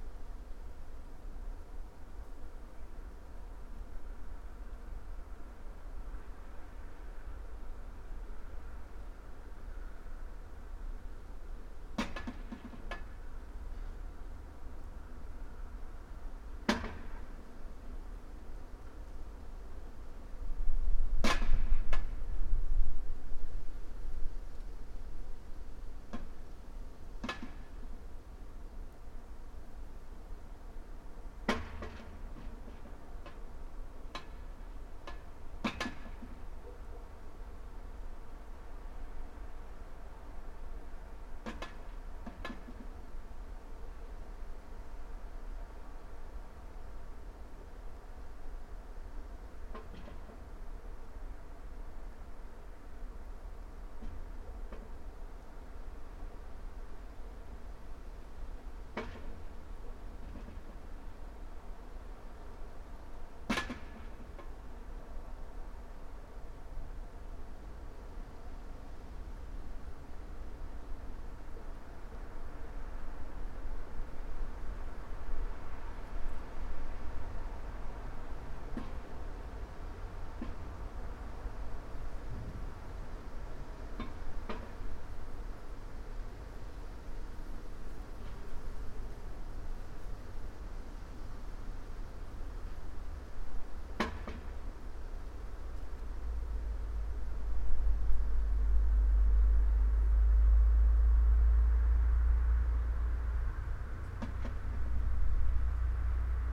few meters below reflector, winter